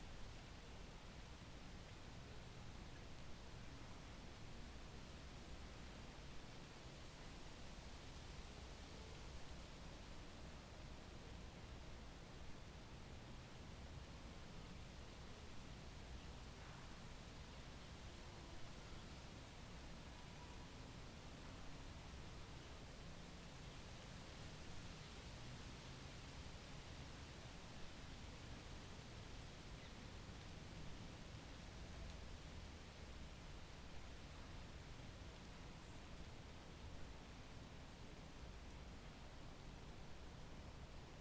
Talmastraat, Amsterdam, Nederland - Parkieten/ Parakeets
(description in English below)
Deze wijk zit zomers vol parkieten. Ze zitten in de bomen en bewegen zich in een grote groep van de ene naar de andere boom. De straat wordt een soort landingsbaan waarop de parkieten in een razend tempo opstijgen en voorbij zoeven.
In the summer this neighbourhood is full of parakeets. They sit in the trees and move in large groups from one tree to another. This street is used as a runway in which the parakeets pace off in high speed.